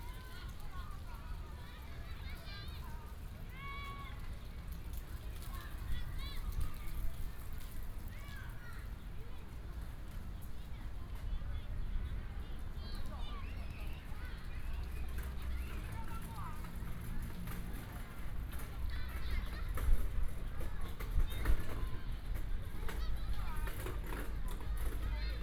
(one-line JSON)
{"title": "新勢公園, Taoyuan City - in the park", "date": "2017-02-07 15:50:00", "description": "in the park, Child, Dog barking", "latitude": "24.95", "longitude": "121.22", "altitude": "136", "timezone": "GMT+1"}